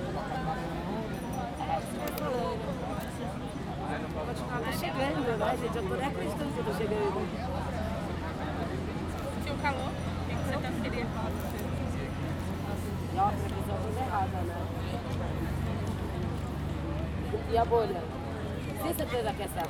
The ambience before a legalise marijuana march, in Salvador, Brazil.
- Barra, Salvador - Bahia, Brazil, January 2014